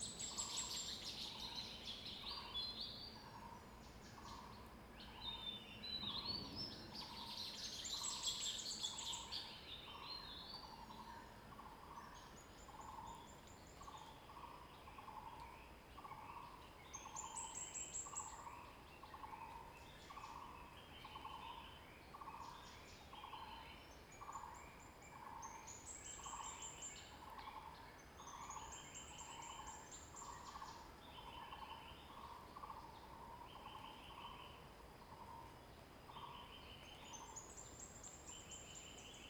Lane 水上, Puli Township 桃米里 - Birds
Birds singing, face the woods
Zoom H2n MS+ XY